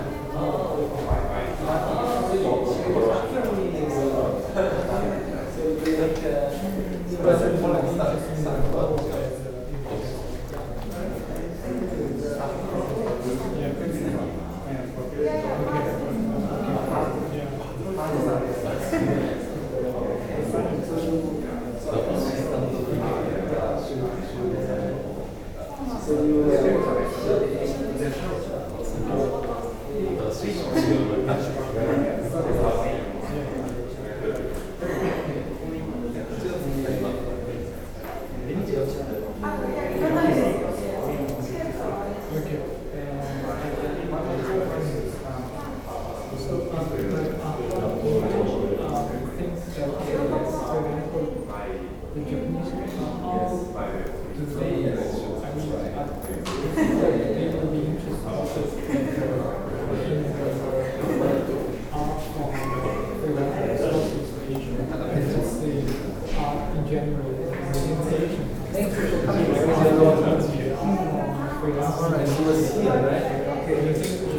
tokyo, shugoarts gallery, opening
opening crowd at loft based gallery shugoarts - here at an exhibition of artist Jun Yang
international city scapes - social ambiences and topographic field recordings